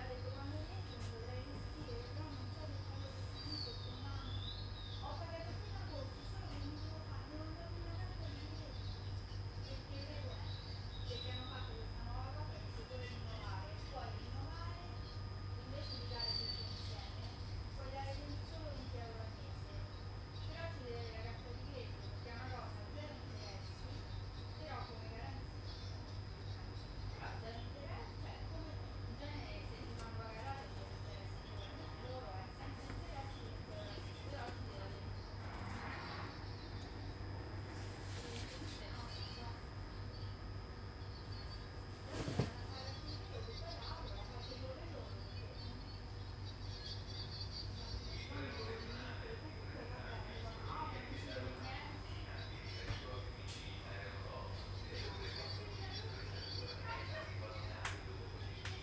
Roma Termini, Roma RM, Italien - Regionale Veloce - Roma Termini (15:00)
train sounds. starting and stopping. people chatting. mobile phones ringing. the start of a journey.
14 October 2018, 15:00